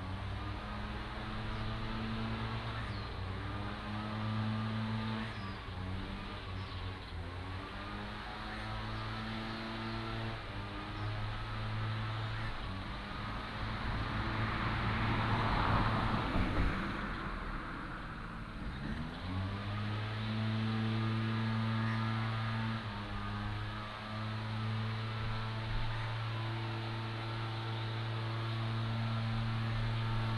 桃米橋, Puli Township - Birdsong
Traffic Sound, Birdsong, Dogs barking, Next to the stream
Puli Township, 桃米巷68號, 29 April 2015